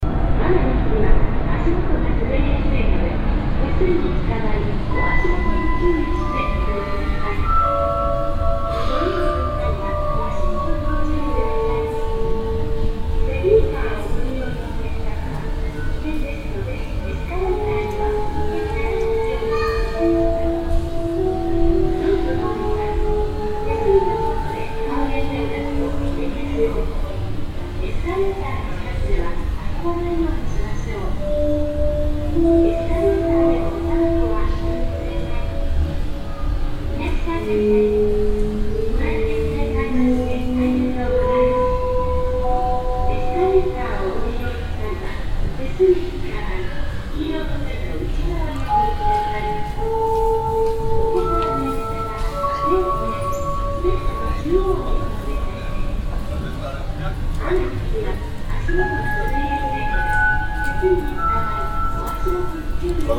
yokohama, landmark tower, sound installation
A kind of sound installation, appearing temporary and maybe clock based in the open space between two huge shopping malls.
Here recorded at noon of a windy and hot summer day. Parallel with the installation sound the automatic voice welcome and elevator warning.
international city scapes - topographic field recordings and social ambiences